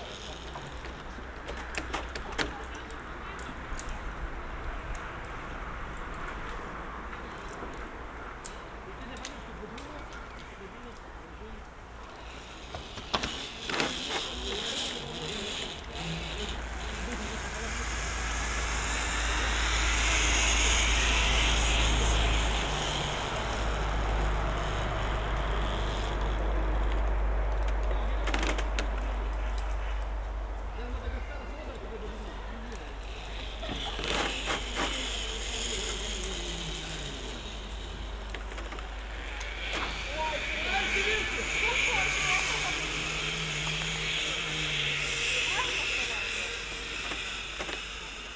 Zarasai, Lithuania, amusement park
Sennheiser Ambeo Smart headset recording in amusement park